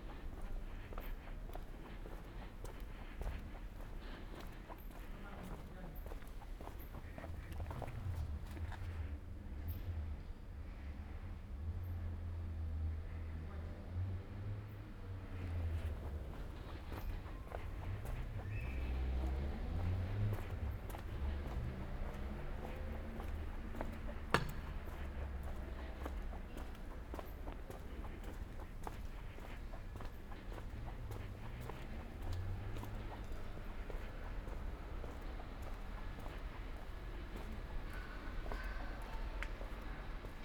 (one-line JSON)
{"title": "Ascolto il tuo cuore, città. I listen to your heart, city. Chapter V - Supermercato serale ai tempi del COVID19 Soundwalk", "date": "2020-03-12 20:23:00", "description": "Thursday March 12 2020. Walking in San Salvario district, Turin two days after emergency disposition due to the epidemic of COVID19.\nStart at 8:23 p.m. end at 9:00 p.m. duration of recording 36'42''\nThe entire path is associated with a synchronized GPS track recorded in the (kml, gpx, kmz) files downloadable here:", "latitude": "45.06", "longitude": "7.68", "altitude": "246", "timezone": "Europe/Rome"}